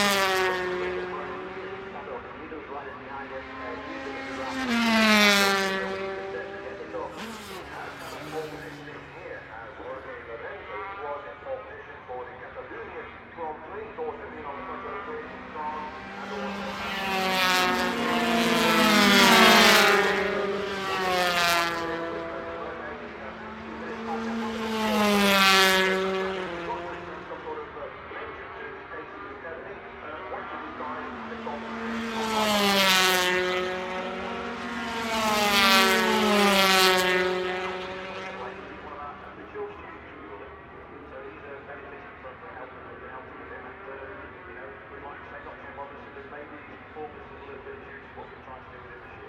British Motorcycle Grand Prix 2004 ... 125 qualifying ... one point stereo mic to mini-disk ...
Derby, UK, July 2002